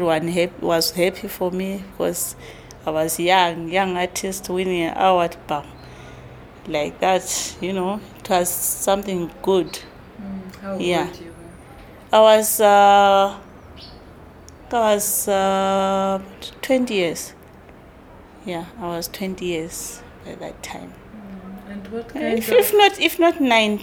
NGZ back-yard, Makokoba, Bulawayo, Zimbabwe - Nonhlanhla - my gran was a bit of a Tonga...
…we resume our recording with Nonhlanhla at the far end of the back yard, just in front of the care-taker’s house. Nonnie talks about her grandmother who ”was a bit of an artist…”, weaving mats and baskets and introducing the young girl to the traditional patterns in Ndebele and Tonga culture…
Find Nonhlanhla’s entire interview here: